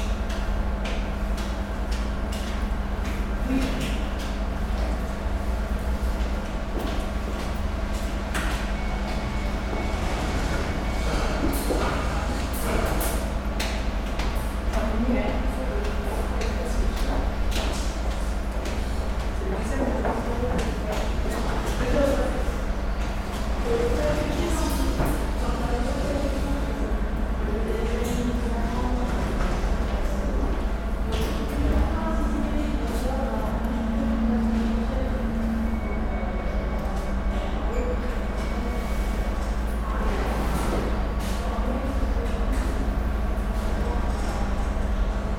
Place de Paris, Lyon, France - Couloir de parking SNCF Vaise
Dans les couloirs dune parking SNCF à Lyon Vaise (9e).
17 November 2017, ~18:00